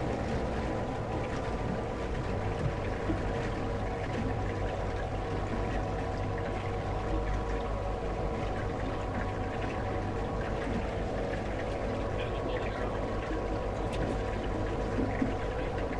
recorded aboard the Vancouver Harbour Patrol boat as part of MAC Artist-In-Residence program for CFRO Co-op Radio
BC, Canada, 8 June 2010, 14:20